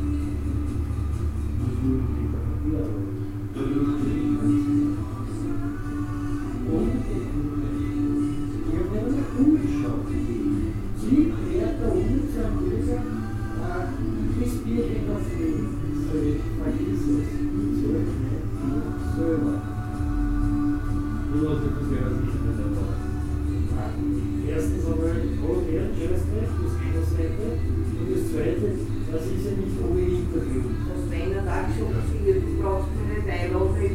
wien x - steirerhof
steirerhof, senefeldergasse 25, 1100 wien
Wien, Austria, 15 February, 21:20